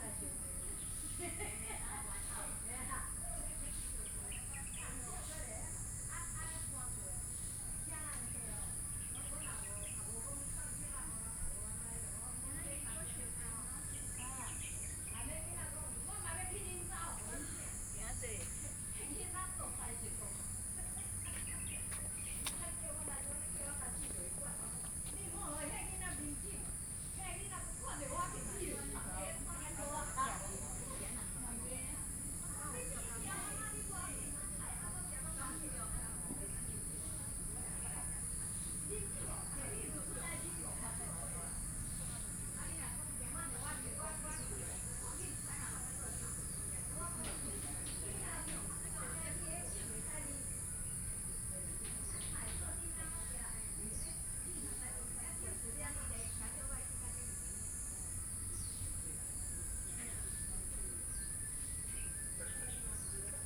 民意里, Hualien City - in the Park
Birdsong, Morning at Park, Many older people are sports and chat
Binaural recordings
Hualien County, Taiwan, August 2014